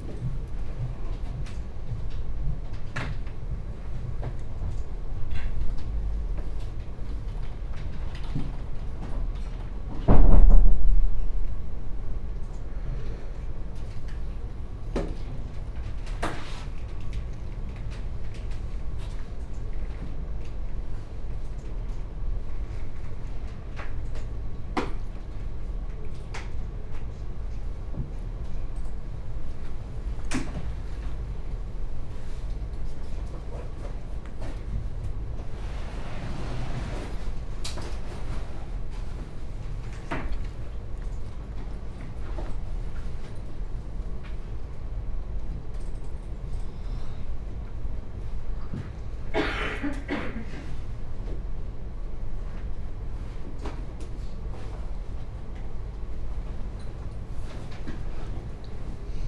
Short 10-minute meditation in the 'Silent Space' of the library at Oxford Brookes University (spaced pair of Sennheiser 8020s with SD MixPre6).
Oxford, UK, 4 February 2019, ~15:00